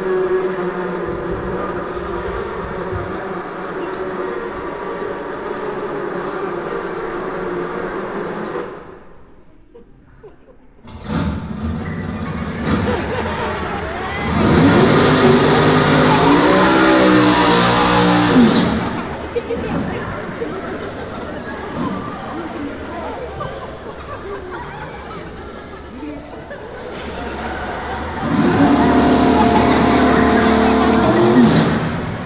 {"title": "Pempelfort, Düsseldorf, Deutschland - Düsseldorf, Tonhalle, main hall, performance", "date": "2013-05-28 14:30:00", "description": "Inside the main hall of the Tonhalle during a performance of \"The Big Bang Box\" - a music theatre dance piece for children. The sounds of the performance accompanied by the sound of the mostly young audience.\nsoundmap nrw - topographic field recordings, social ambiences and art places", "latitude": "51.23", "longitude": "6.77", "altitude": "40", "timezone": "Europe/Berlin"}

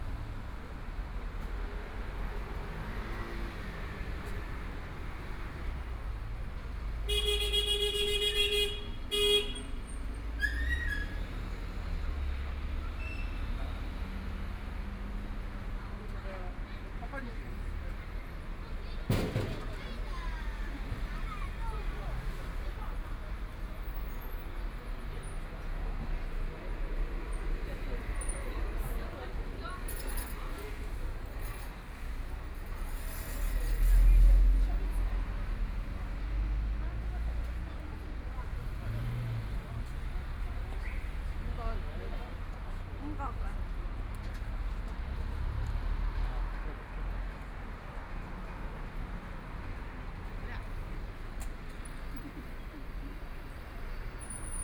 {"title": "Fuxing Road, Shanghai - walking in the Street", "date": "2013-12-01 12:29:00", "description": "Walking on the street, Community-mall, Binaural recordings, Zoom H6+ Soundman OKM II", "latitude": "31.22", "longitude": "121.48", "altitude": "13", "timezone": "Asia/Shanghai"}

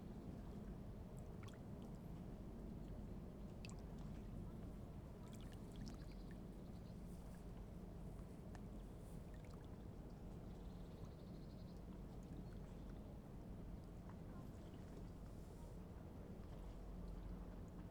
{"title": "Berlin Wall of Sound, Griebnitzsee Virchowstrasse 120909", "latitude": "52.40", "longitude": "13.12", "altitude": "33", "timezone": "Europe/Berlin"}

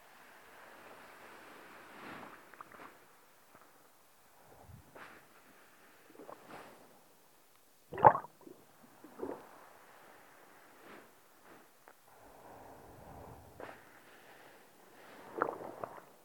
{"title": "Under the sand around St Ninian's Isle, Shetland - Listening under the sand", "date": "2013-08-04 21:07:00", "description": "The hydrophones made by Jez Riley French are slightly buoyant which is often a good thing, but less so when trying to record in forceful waters which tend to drag them around quite a lot. To solve this problem and also to be able to hear the sea turning the sand about on its bed, I buried my hydrophones in the sand and listened to the tide working above them. Recorded with hydrophones made by Jez Riley French and FOSTEX FR-2LE.", "latitude": "59.97", "longitude": "-1.34", "altitude": "5", "timezone": "Europe/London"}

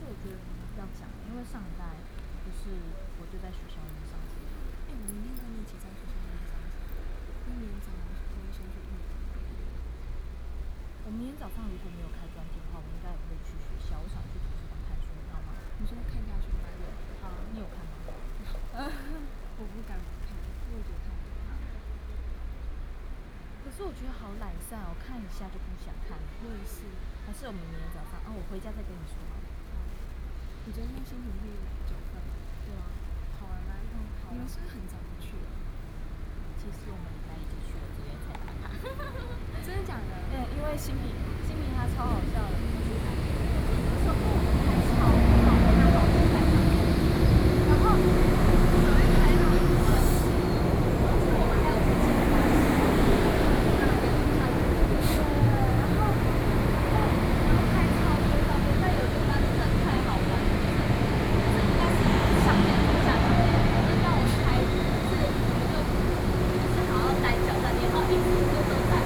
{"title": "Puxin, Taoyuan - Station platforms", "date": "2013-08-14 12:41:00", "description": "in the Station platforms, Sony PCM D50+ Soundman OKM II", "latitude": "24.92", "longitude": "121.18", "altitude": "181", "timezone": "Asia/Taipei"}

